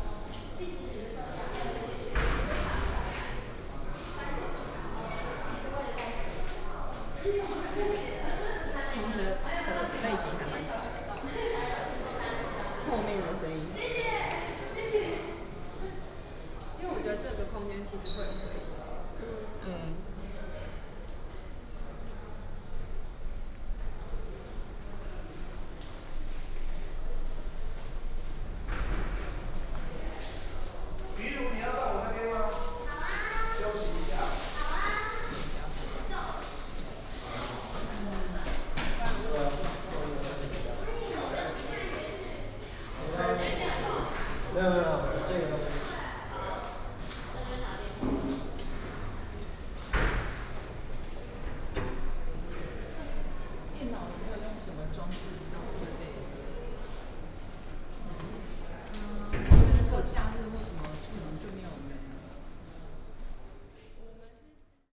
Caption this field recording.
In the hall, At the university